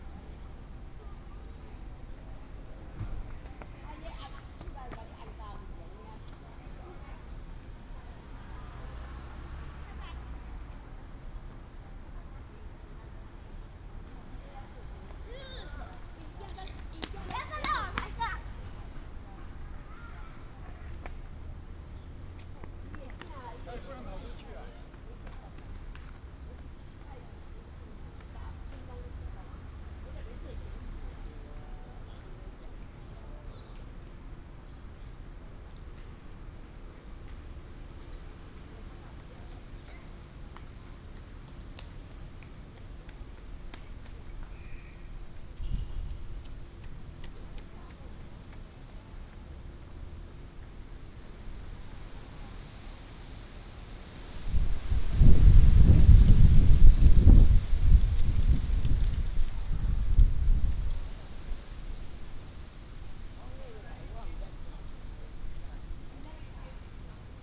Jinghua Park, Datong Dist., Taipei City - in the Park
in the Park, Traffic sound, sound of birds, Child